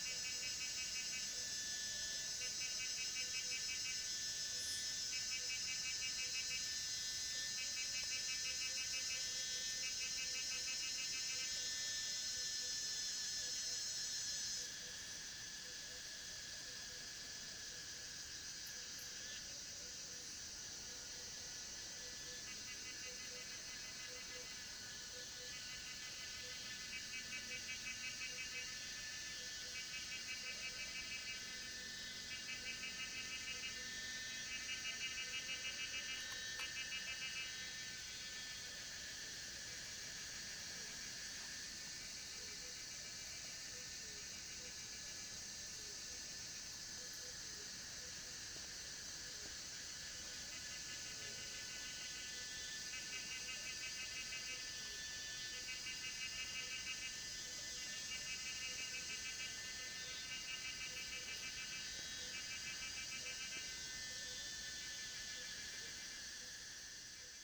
水上巷 桃米里, Nantou County - Cicadas cry
Cicadas cry, Frogs chirping, Insects called
Zoom H2n MS+XY
Puli Township, 水上巷28號, 7 June 2016, 18:54